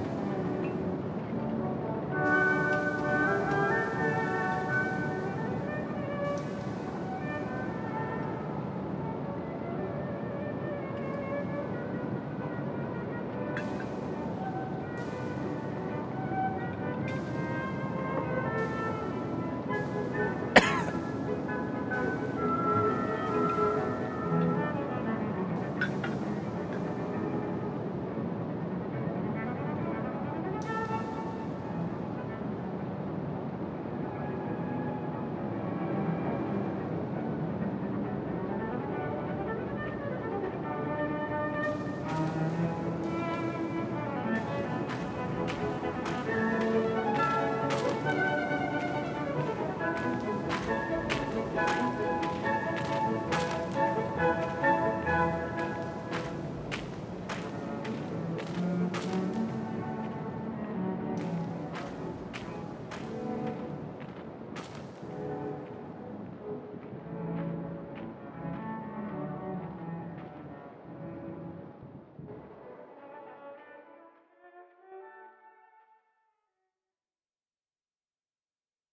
Urspelt, Chateau d Urspelt - Urspelt, chateau, inner courtyard
An einem windigen Sommerabend im Innenhof des renovierten Schlosses von Urspelt, das in ein vier Sterne Hotel und Restaurant umgebaut wurde.
Die Klänge der windigen Abendstimmung mit klassischer Musik aus Lautsprechern im Garten des Innenhofs. Im Hintergrund ein paar Gäste an Tischen und Schritte im Kies.
On a windy summer evening inside the inner couryard of the renovated old manison, that nowadays is a four star hotel and restaurant. The sounds of the windy evening atmosphere with classical music coming fromspeakers inside the garden. In the background some guests at tables and steps on gravel ground.
Clervaux, Luxembourg, 6 August 2012